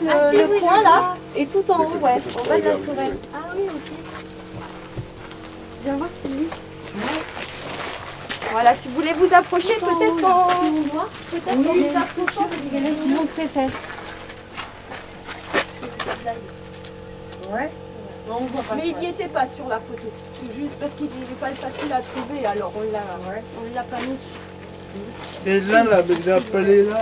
Nocé, France - Visite du Manoir de Courboyer
Enregistrement de la visite guidée du Manoir de Courboyer, Zoom H6, micros Neumann